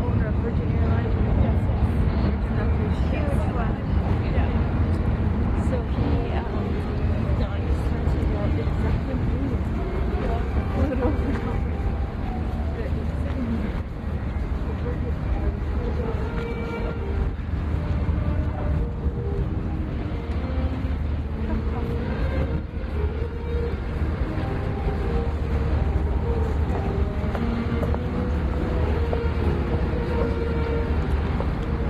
Screeching train with its grinding of brakes. Then halfway across busker playing bagpipes.
London, UK, 16 May, ~15:00